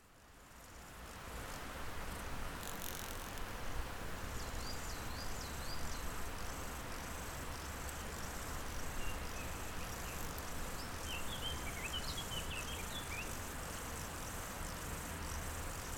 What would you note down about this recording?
Au bord de la route du Nant Fourchu dans un pré près du Chéran. criquets mélodieux quelques oiseaux .